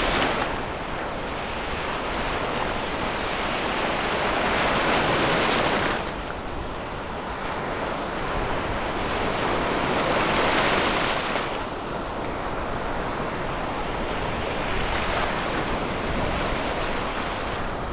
Orzechowo, at the beach - waves over rocks
medium size waves washing over medium size rocks
Poland, 15 August 2015, 16:39